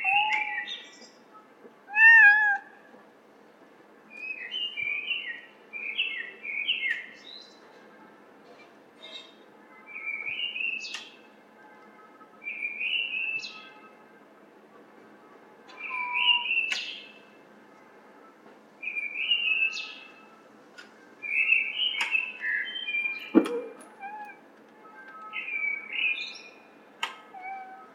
{"title": "Rue Sedaine, Paris, France - Black bird", "date": "2021-01-29 18:27:00", "description": "Sound of a blackbird singing in my yard, the sound of my cat who meows on the rooftop, the distant noise of people entering the building, sound of dual-tone siren away. Zoom H4N + ME66 Shotgun", "latitude": "48.86", "longitude": "2.37", "altitude": "48", "timezone": "Europe/Paris"}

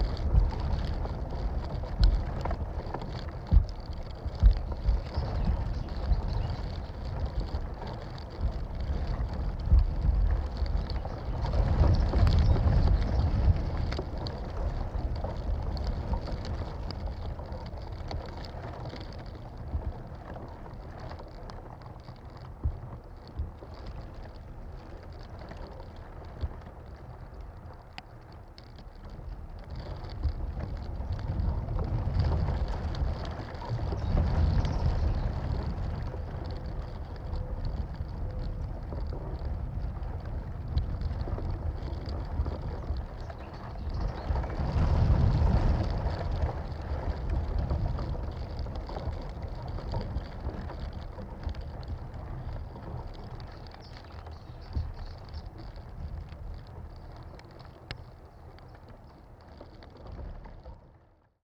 {"title": "R. dos Malmequeres, Amora, Portugal - Palm tree fibres inn wind plus bird; 2 contact mics", "date": "2021-06-22 12:32:00", "description": "2 contact mics placed lower down the trunk than the previous recording. Contacts mics also pick up sounds from the air, eg the close bird song and distant traffic heard in this recording.", "latitude": "38.60", "longitude": "-9.14", "altitude": "43", "timezone": "Europe/Lisbon"}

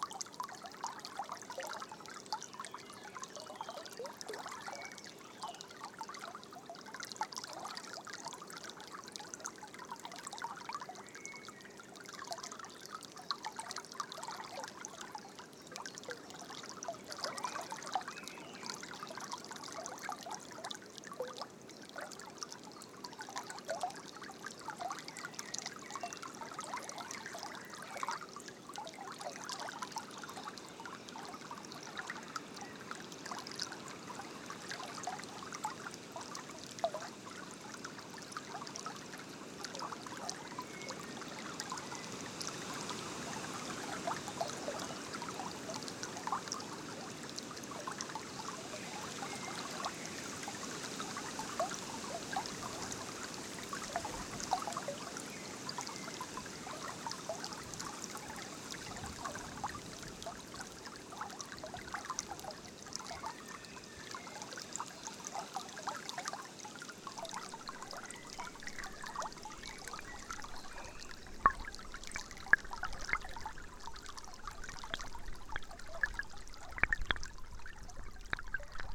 {"title": "Lithuania, river Krasuona", "date": "2020-06-06 18:40:00", "description": "little river Krasuona under the road. the first part is recorded with omni mics, the second part - hydrophone", "latitude": "55.45", "longitude": "25.68", "altitude": "157", "timezone": "Europe/Vilnius"}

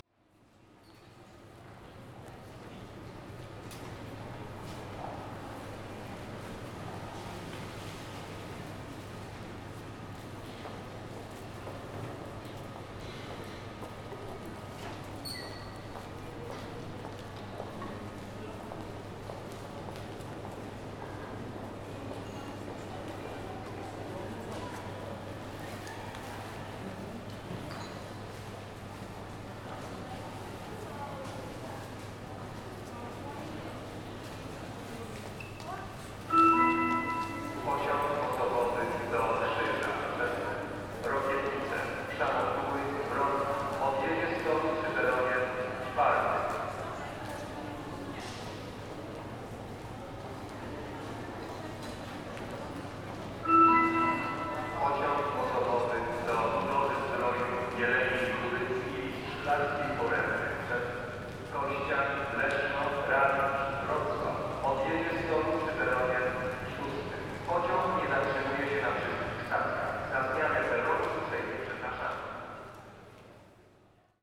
{"title": "Poznan, main train station, around noon", "date": "2009-11-09 21:33:00", "description": "ambience + two announcements of regional trains", "latitude": "52.40", "longitude": "16.91", "altitude": "80", "timezone": "Europe/Berlin"}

